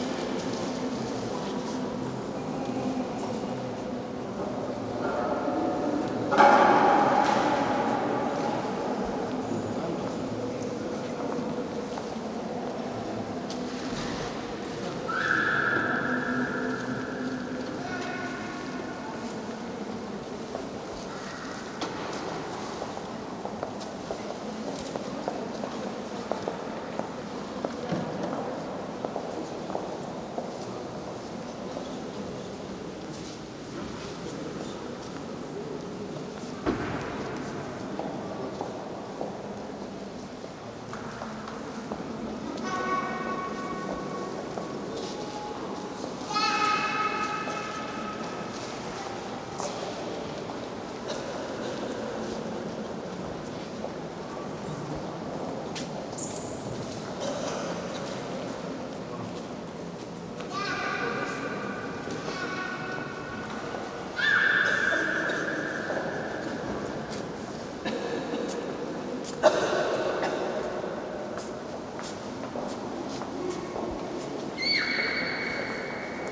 Dzerginsk, Nikolo-Ugreshsky Monastery, inside Spaso-Preobragensky Cathedral
Province of Moscow, Russia, 22 May, 16:00